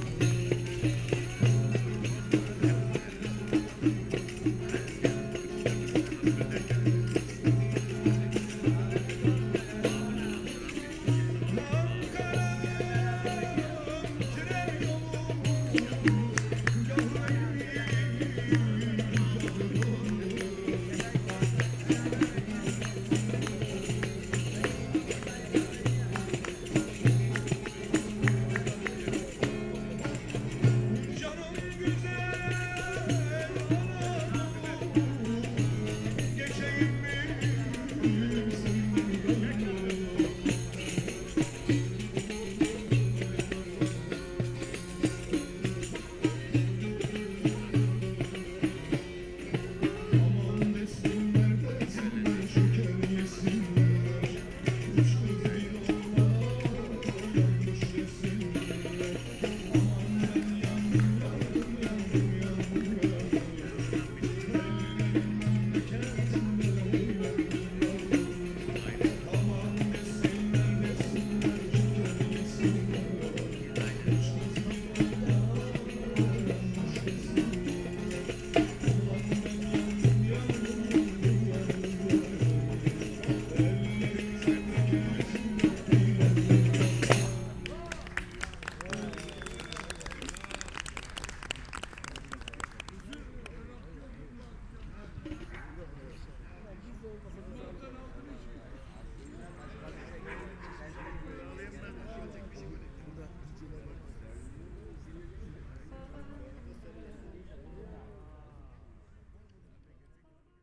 Volkspark Hasenheide, Berlin - musicians gathering
musicians gathering in Hasenheide park, sunny late summer weekend afternoon
(SD702, DPA4060)
Berlin, Germany, September 2014